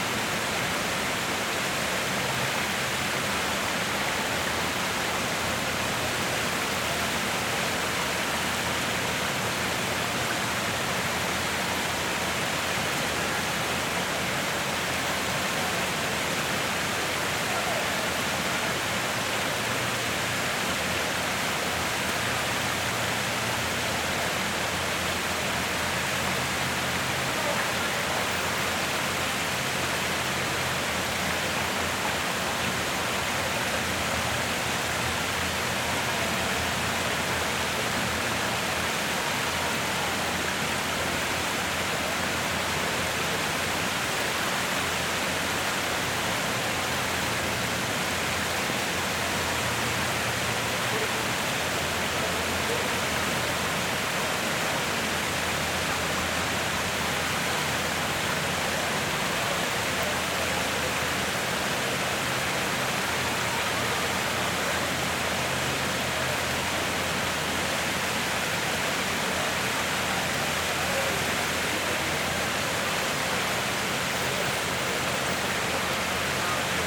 E 53rd St, New York, NY, USA - Artificial Waterfall at 601 Lex Ave
Sounds from the artificial waterfall at 601 Lex Ave recorded at street level, with sounds of traffic bleeding into the sounds of the water.
This waterfall is relatively new and substitutes the original and much bigger artificial waterfall/fountain designed by Hideo Sasaki in the 1970s. The original goal of this waterfall feature was to "mask much of the street noise and add to the feeling that the passerby is free from the congestion of the street (1977)."